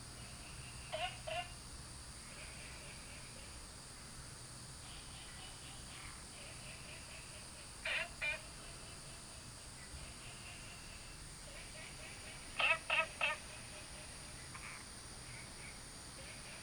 {"title": "Taomi Ln., Puli Township - Early morning", "date": "2015-08-12 04:15:00", "description": "Early morning, Frogs chirping, Bird calls", "latitude": "23.94", "longitude": "120.94", "altitude": "463", "timezone": "Asia/Taipei"}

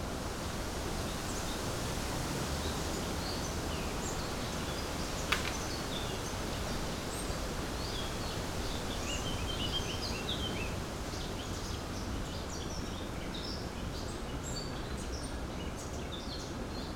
{"title": "lisbon goethe institut - garden, wind in the trees", "date": "2010-07-01 20:15:00", "description": "wind in the beautiful trees, in the garden of the goethe institute lisbon.", "latitude": "38.72", "longitude": "-9.14", "altitude": "69", "timezone": "Europe/Lisbon"}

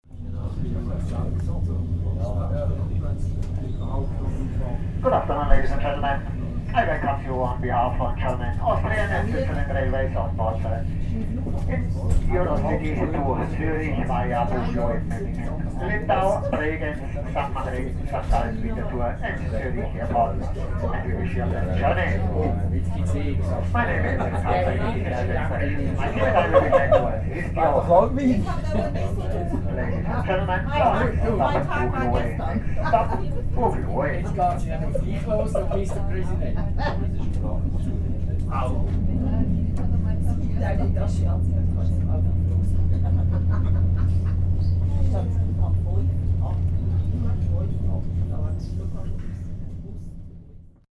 {"title": "train munich - zurich, restaurant car", "description": "announcement, passengers. recorded june 7, 2008. - project: \"hasenbrot - a private sound diary\"", "latitude": "48.15", "longitude": "11.47", "altitude": "528", "timezone": "GMT+1"}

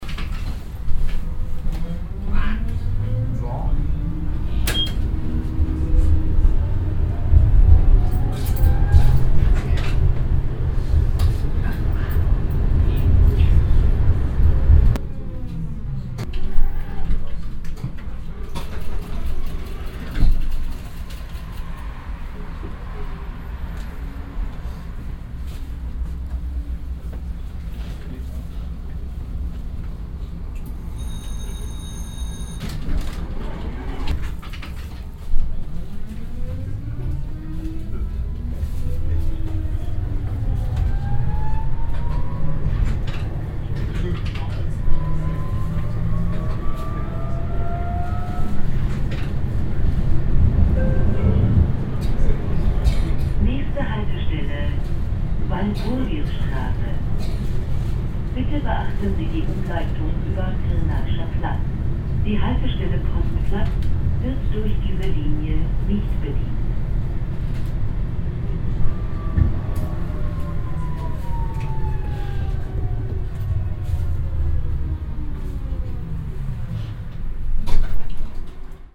{"title": "dresden, in the tram, next station walpurgisstrasse", "date": "2009-06-15 10:06:00", "description": "sound of old east german tram with modern announcement system\nsoundmap d: social ambiences/ listen to the people - in & outdoor nearfield recordings", "latitude": "51.04", "longitude": "13.74", "altitude": "116", "timezone": "Europe/Berlin"}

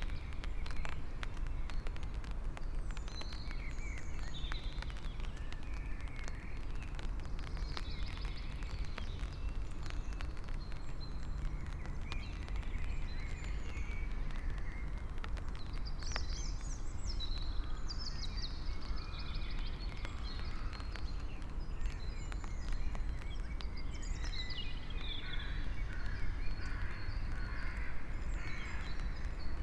{"title": "inside the pool, mariborski otok - with umbrella, rain stops", "date": "2015-04-18 19:16:00", "description": "grey clouds feel heavy ... slowly passing above the old trees; lowered veils had stuck inside the naked crowns", "latitude": "46.57", "longitude": "15.61", "altitude": "258", "timezone": "Europe/Ljubljana"}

